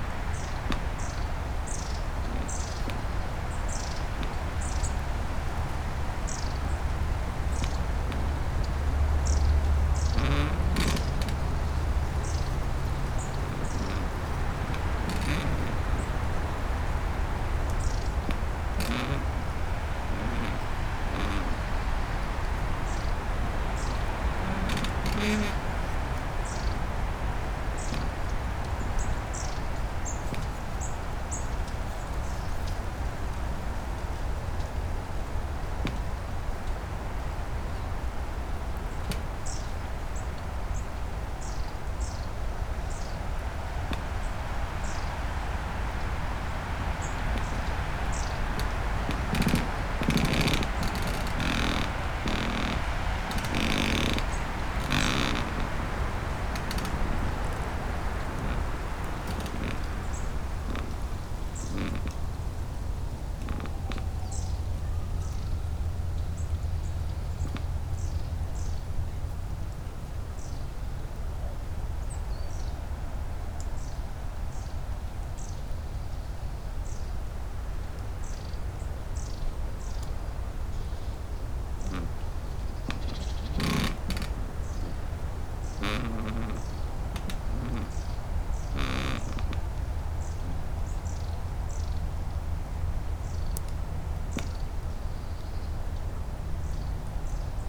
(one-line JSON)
{"title": "hohensaaten/oder: pine forest - the city, the country & me: squeaking pine tree", "date": "2016-03-27 15:49:00", "description": "squeaking pine tree, wind, birds, pusher boat on the oder river getting closer\nthe city, the country & me: march 27, 2016", "latitude": "52.89", "longitude": "14.16", "altitude": "5", "timezone": "Europe/Berlin"}